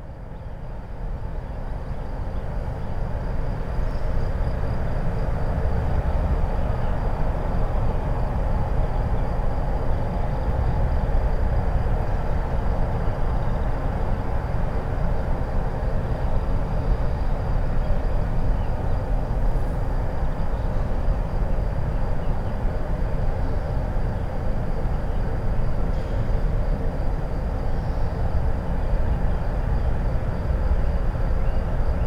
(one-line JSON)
{"title": "Kidricevo, Slovenia - disused factory resonance from outside", "date": "2012-06-18 19:46:00", "description": "this recording is made just a few meters from 'factory resonance 2', but from outside the factory walls.", "latitude": "46.39", "longitude": "15.79", "altitude": "239", "timezone": "Europe/Ljubljana"}